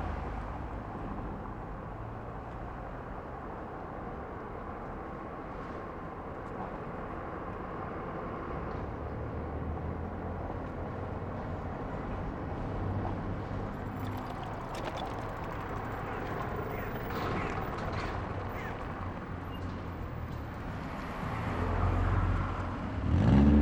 Berlin: Vermessungspunkt Maybachufer / Bürknerstraße - Klangvermessung Kreuzkölln ::: 27.10.2010 ::: 15:01